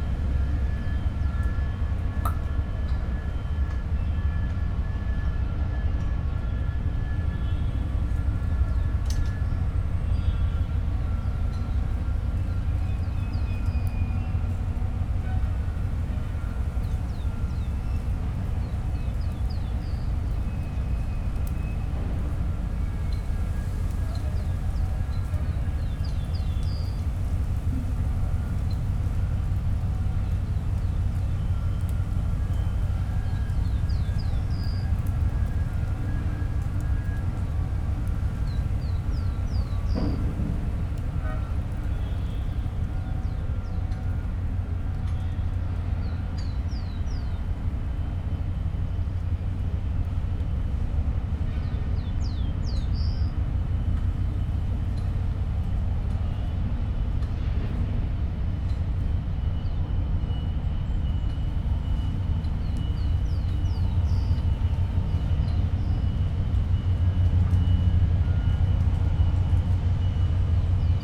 Paseo 21 de Mayo, Valparaíso, Chile - harbour ambience from above
Valparaiso, near elevator at Artilleria, harbor ambience heard from above
(Sony PCM D50, DPA4060)
Región de Valparaíso, Chile, 24 November, 11:05